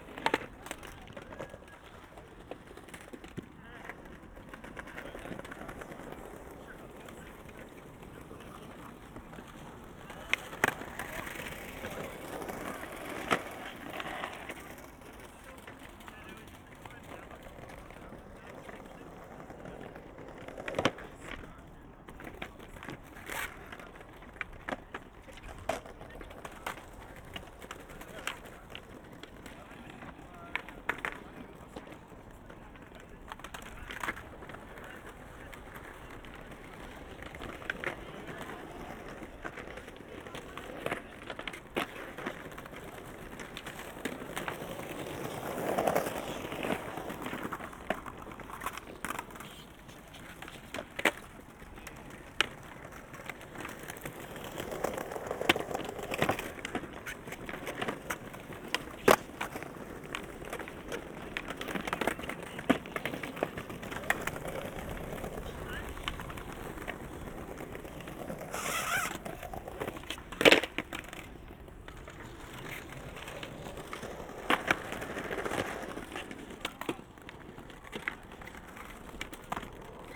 Tempelhofer Feld, Berlin - skater area
skaters practising on former Berlin Tempelhof airport, at a designated area which seems to be quite popular
(Sennheiser Ambeo headset / ifon SE)